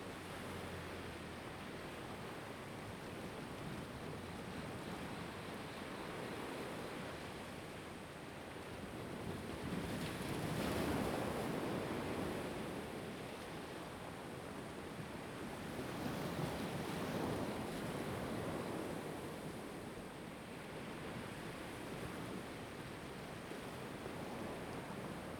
Jiayo, Ponso no Tao - sound of the waves
At the beach, sound of the waves
Zoom H2n MS +XY